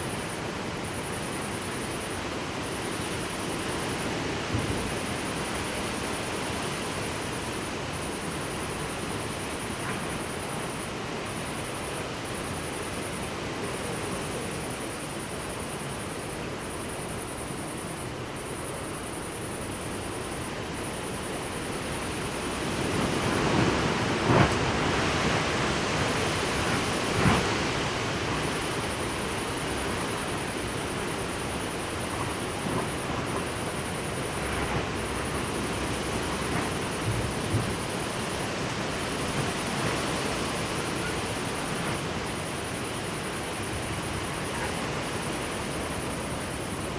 Saint-Georges-d'Oléron, Frankrijk - wind in tent
a rare silent moment at a camping
inside our tent listening to the wind